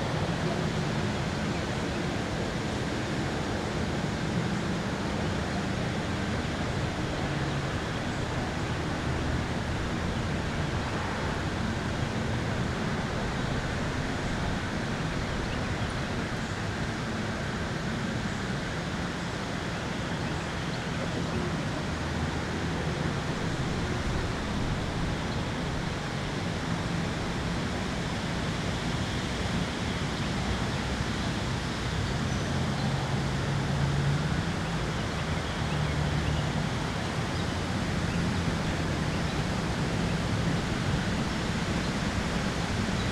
Hicks Rd, Marietta, GA, USA - Windy Spring Day At Milford Park
A windy day at Milford Park off of Hicks Road. There was hardly anyone there, so the majority of the sounds come from the wind in the trees and human activity from the surrounding area. Birds can also be heard. This is an intact section of the full recording, which suffered from microphone overload due to strong wind gusts. This audio was captured from the top of the car.
[Tascam Dr-100mkiii & Primo EM-272 omni mics]
25 April 2021, 15:57